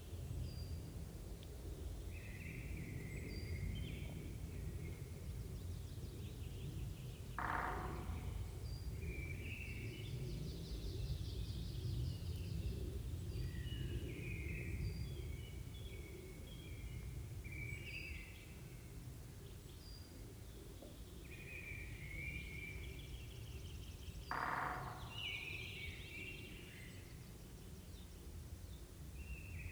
Vogelsang, ex Soviet base, Germany - Song thrush and woodpecker drumming
Recorded beside an old power plant with a precariously bent brick chimney at Vogelsang. Trees now grow unhindered throughout this abandoned Soviet military base, now a nature reserve. It is a 2km walk from the station or nearest road. One is free to explore the derelict buildings, which are open to the wind and weather. It is an atmospheric place that surprises with unexpected details like colourful murals and attractive wallpapers in decaying rooms. There is a onetime theater and a sports hall with ancient heating pipes dangling down the walls. Lenin still stands carved out in stone. Forest wildlife is abundant and the springtime birds a joy to hear.